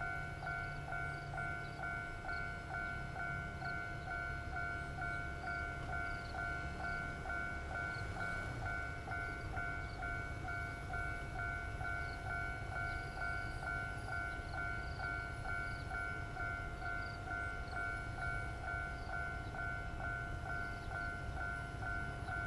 Xuejin Rd., Wujie Township - At railroad crossing
At railroad crossing, Close to the track, Traffic Sound, Trains traveling through
Zoom H6 MS+ Rode NT4